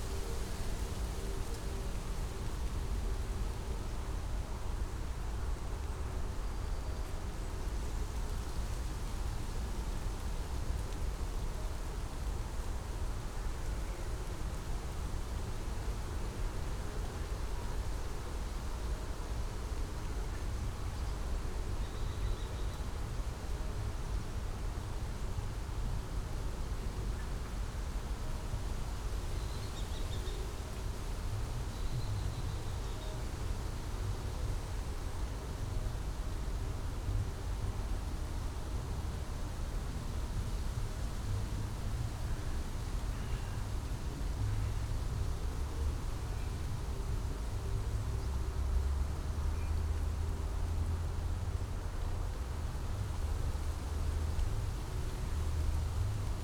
Tempelhofer Feld, Berlin, Deutschland - Sunday morning, wind in poplars
autumn Sunday morning, wind and churchbells
(Sony PCM D50, DPA4060)
Berlin, Germany, 12 October 2014, 10:00